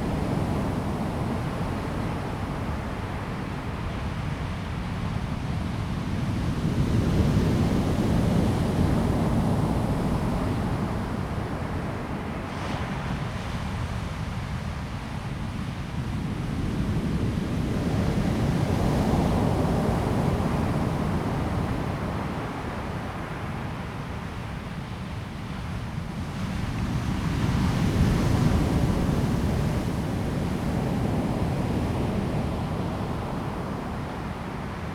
旭海牡丹灣, Mudan Township - At the beach
At the beach, Sound of the waves, wind
Zoom H2n MS+XY